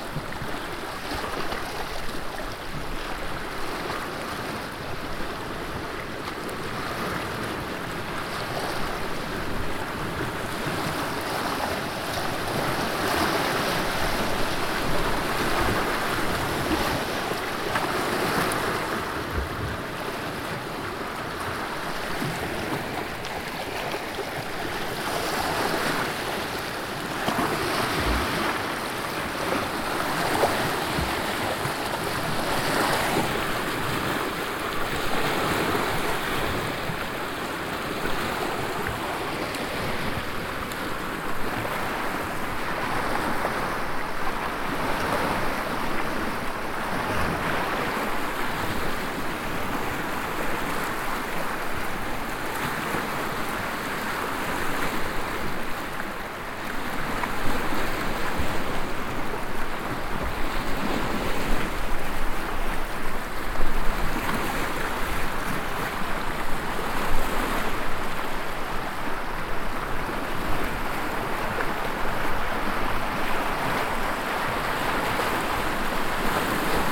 Kariba Lake, Sinazongwe, Zambia - windy morning at Kariba...
...in the middle of the night I had already heard the rigs go home and the winds picking up… a night/ day of bad business for the kapenta rigs…
however, this recording became something like our signature sound during the Zongwe FM broadcasts of women across the lake…